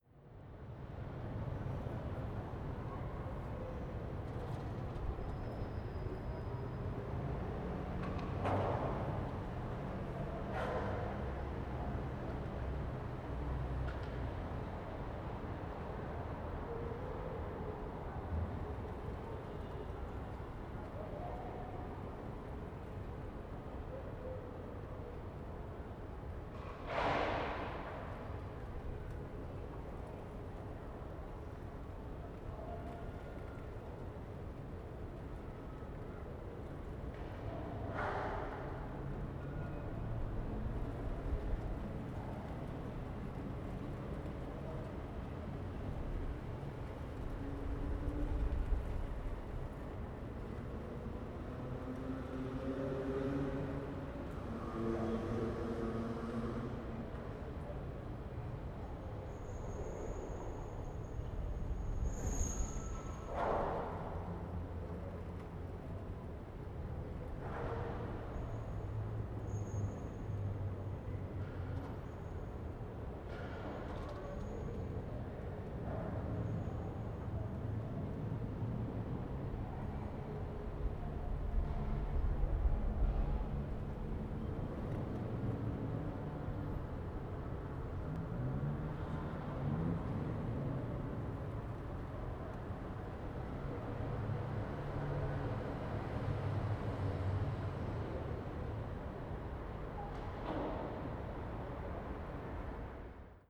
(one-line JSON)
{"title": "MAMAC Rooftop, Place Yves Klein, Nice, France - MAMAC rooftop", "date": "2014-05-09 14:11:00", "description": "Recording taken from the rooftop of the Contemporary Art Museum in Nice. You can hear sounds of traffic and skateboarders below.", "latitude": "43.70", "longitude": "7.28", "altitude": "23", "timezone": "Europe/Paris"}